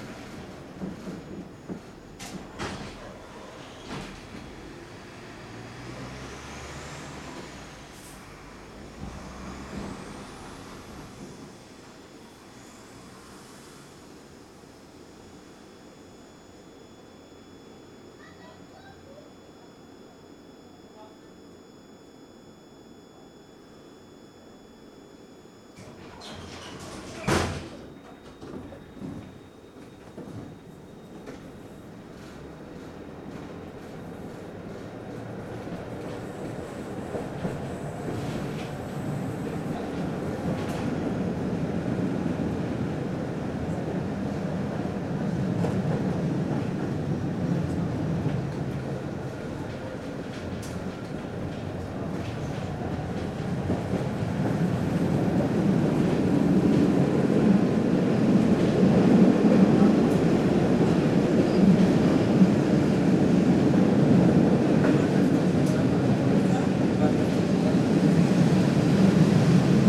Sequence of a journey with 'Tramwaj 19' from Bytom to Katowice, past peri-urban brownfield sites and along humming traffic arteries of the Upper Silesian Industrial Region. The tram itself couldn't be more regional: a 'Konstal 105Na', manufactured from 1979 to 1992 in Chorzów's Konstal factories.
Recorded with binaural microphones.